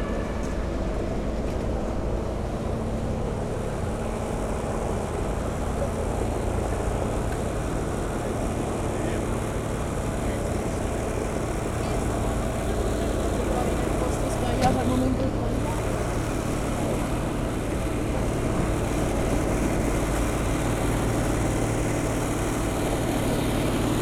berlin: hermannplatz - the city, the country & me: 1st may riot soundwalk
soundwalk around hermannplatz, police cars, vans, trucks and water guns waiting on the revolution
the city, the country & me: may 1, 2011
Berlin, Germany, 1 May, 20:13